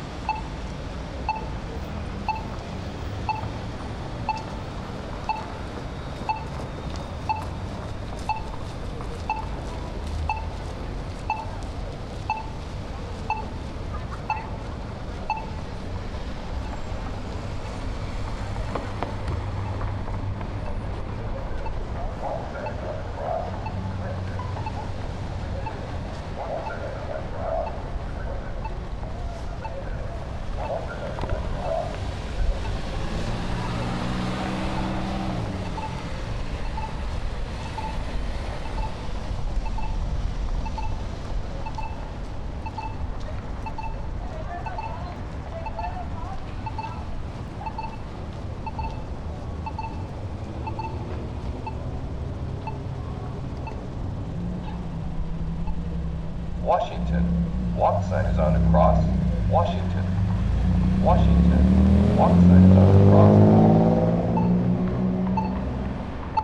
footsteps, traffic, traffic signals. recorded on H4N zoom recorder
23 January, 20:25